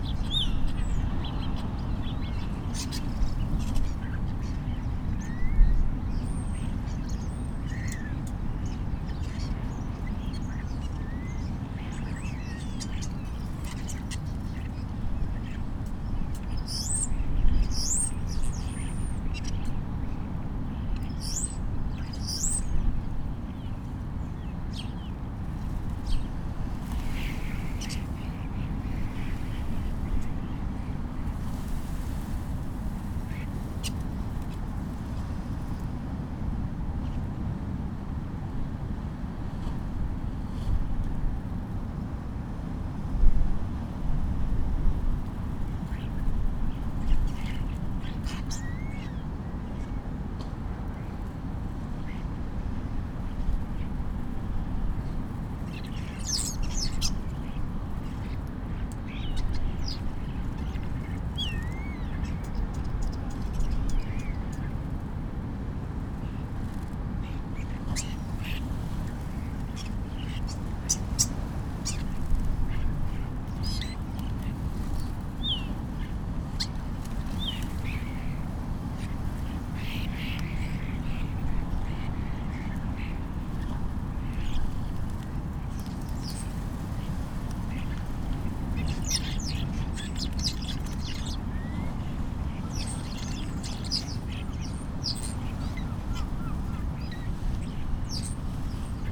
Crewe St, Seahouses, UK - Flocking starlings ...
Flocking starlings ... lots of mimicry ... clicks ... squeaks ... creaks ... whistles ... bird calls from herring gull ... lesser black-backed gull ... lavaliers clipped to a sandwich box ... background noise ... some wind blast ...
4 November, 07:10